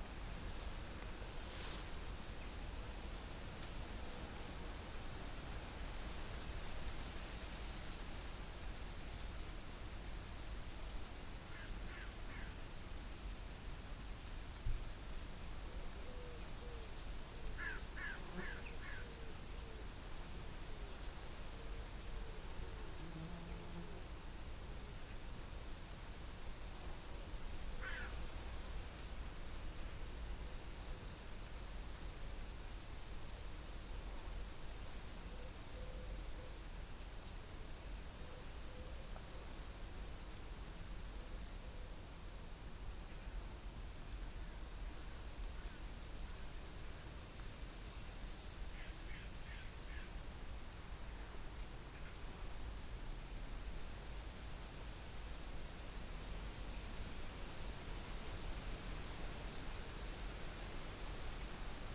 Cut Throat Lane, County Durham, UK - Underneath Sycamore Tree on Cut Throat Lane
Recording stood underneath large Sycamore Tree on Cut Throat Lane in County Durham. Wood Pigeon, Crows, insects and wind in leaves. Sounds of farm nearby. Recorded using Sony PCM-M10
Newcastle upon Tyne, UK, 6 August 2016